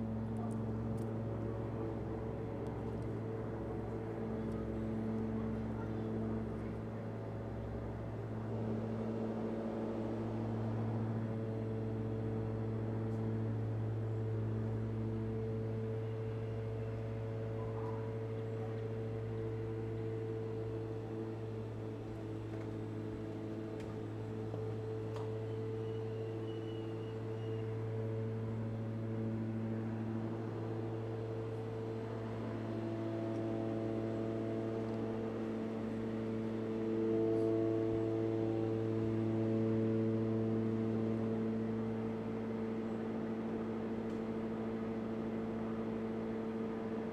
Berlin Wall of Sound, Steinstuecken 120909